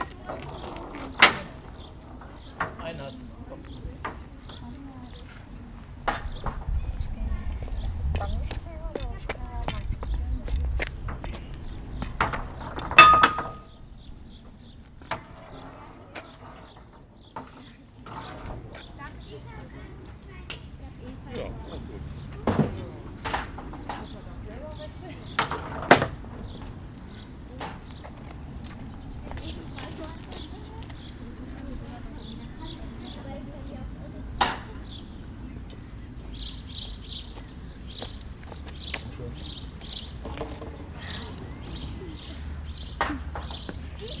Bleialf, Deutschland - KInder spielen Minigolf / Children playing mini golf
Im Hintergarten eines Restaurants spielen Kinder Minigolf; Stimmen, Abschläge, rollende Bälle, Vögel.
In the back garden of a restaurant, children playing mini golf; Voices, tee offs, bowling balls, birds.
Bleialf, Germany